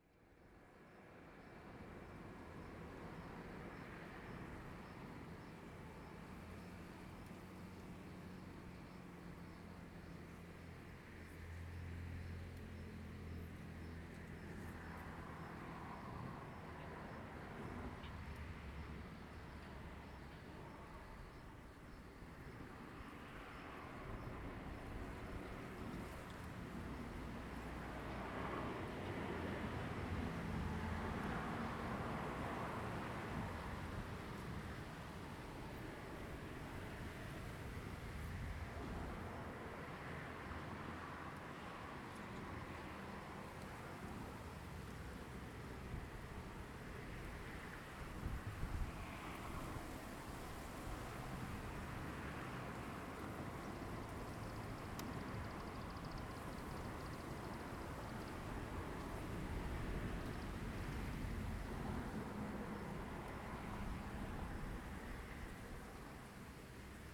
Fuli Township, Hualien County - beside the tracks
beside the tracks, Traffic Sound, Train traveling through, Very hot weather
Zoom H2n MS+ XY